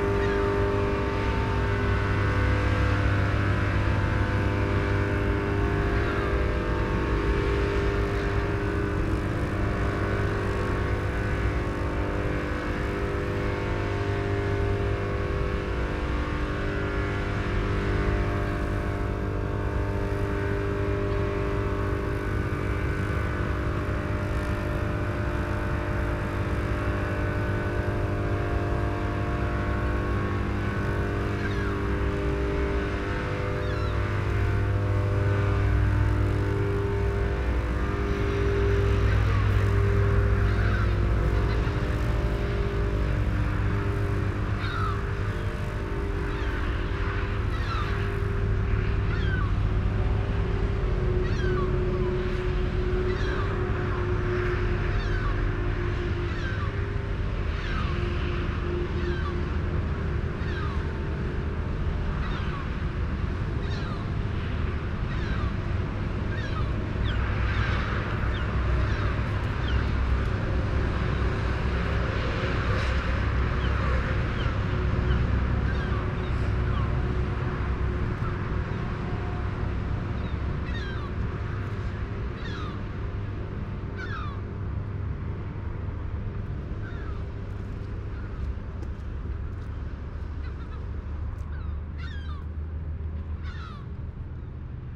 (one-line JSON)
{"title": "E-on coal burning powerstation, Maasvlakte - Transformers at E-ON power station", "date": "2011-07-24 11:51:00", "description": "Telinga Parabolic microphone recording of electricity transformers.\nRecording made for the film \"Hoe luidt het land\" by Stella van Voorst van Beest.", "latitude": "51.96", "longitude": "4.02", "altitude": "2", "timezone": "Europe/Amsterdam"}